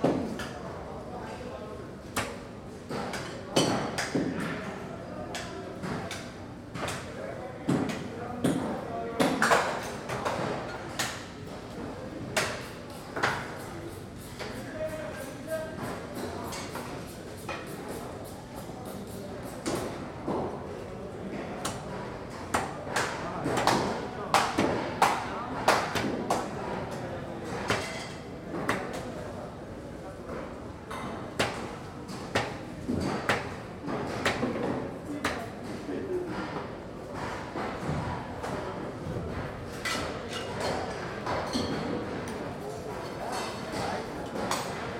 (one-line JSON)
{"title": "Rd No, Manama, Bahreïn - Manam Meat Market - Barhain", "date": "2021-05-27 11:00:00", "description": "Manam Meat Market\nMarché de viande de Manama - Barhain", "latitude": "26.23", "longitude": "50.57", "altitude": "6", "timezone": "Asia/Bahrain"}